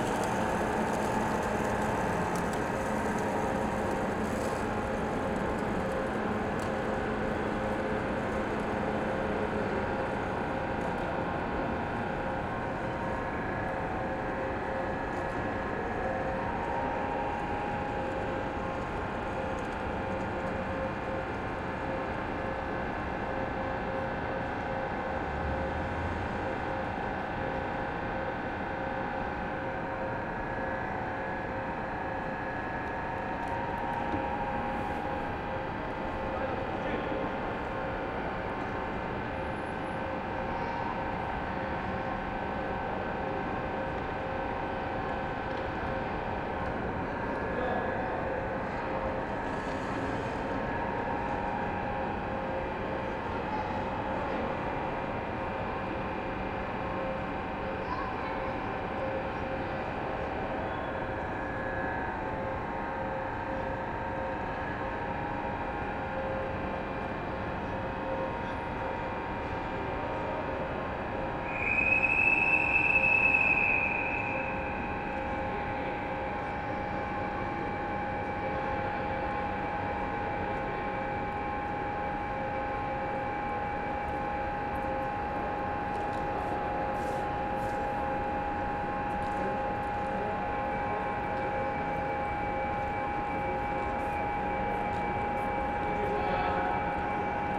Trainstation, Flughafen, Squaire, Frankfurt am Main, Deutschland - Empty trainstation with some voices
In this recording a man is asking for money: Haben Sie vielleicht an Finanzen, was nur durch den Tag helfen könnte. And later: Alles ein bischen haarig so seit Corona. Na Guten Tag. Begging is forbidden at German train stations. The same guy will later be thrown out of Terminal 1 (also recorded, hear there...)